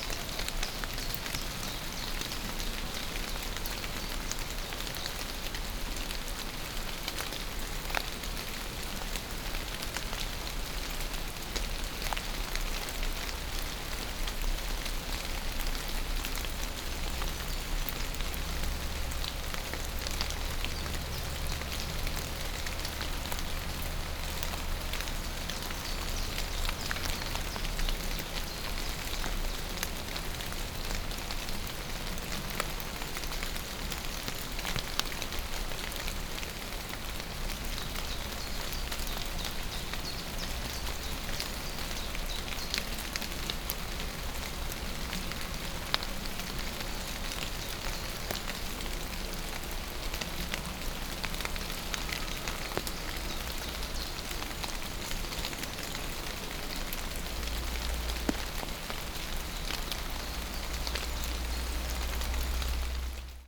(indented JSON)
{
  "title": "Steinbachtal, rain, WLD",
  "date": "2011-07-18 11:54:00",
  "description": "Steinbachtal, standing under a bush, rain, WLD",
  "latitude": "51.39",
  "longitude": "9.63",
  "altitude": "233",
  "timezone": "Europe/Berlin"
}